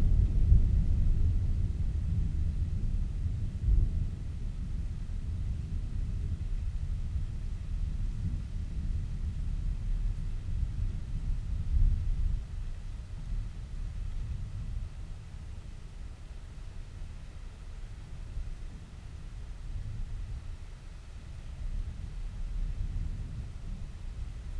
Luttons, UK - inside church ... outside approaching thunderstorm ...
inside church ... outside approaching thunderstorm ... open lavalier mics on T bar on mini tripod ... background noise ...
Malton, UK, 26 July 2018